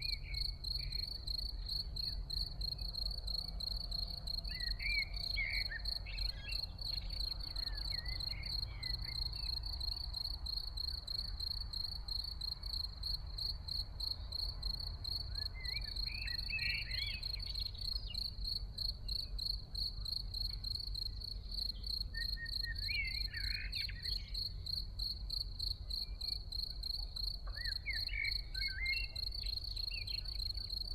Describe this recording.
Vor mehr als 14 Jahren: / More than 14 years ago: Frühsommerszene in einem kleinen Dorf in der Nähe des Bodensees: Feldgrille, Amseln, Kirchenglocken, Stimmen und einige Autos im Hintergrund. Early summer scene in a small village near Lake Constance: Field cricket, blackbirds, church bells, voices and some cars in the background. (Edirol R1, OKM I)